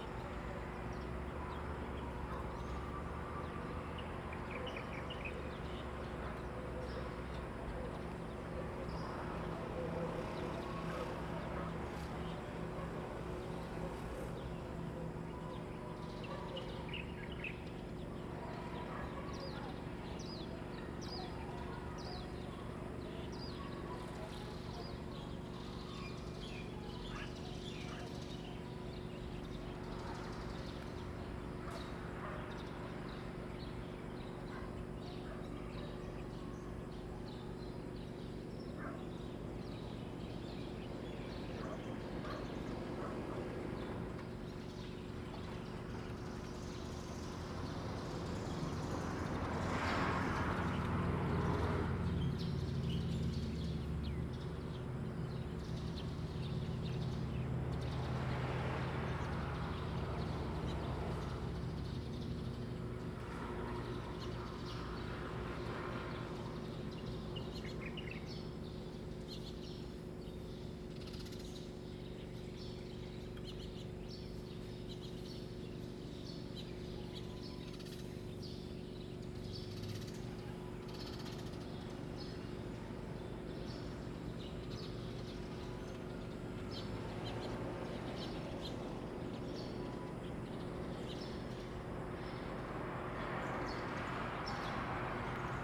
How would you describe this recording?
Birdsong, Traffic Sound, Small village, Crowing sound, Zoom H2n MS +XY